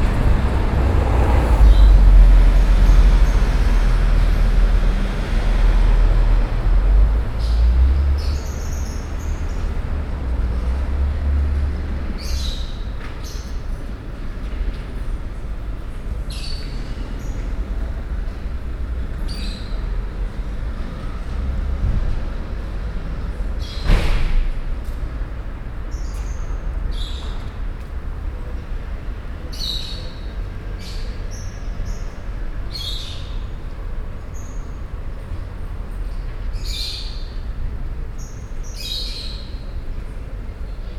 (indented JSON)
{
  "date": "2011-09-30 13:15:00",
  "description": "Brussels, Rue Bosquet, birds in a cage",
  "latitude": "50.83",
  "longitude": "4.35",
  "altitude": "68",
  "timezone": "Europe/Brussels"
}